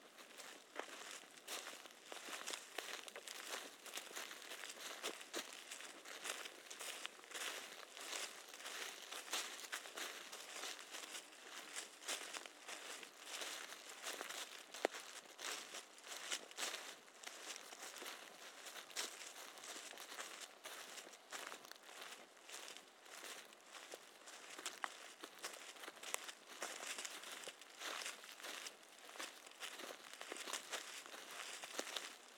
Champsecret, France - Chemin vers la rivière
We were two on this take, We wanted to make one take from the road through the forest path to get to the river.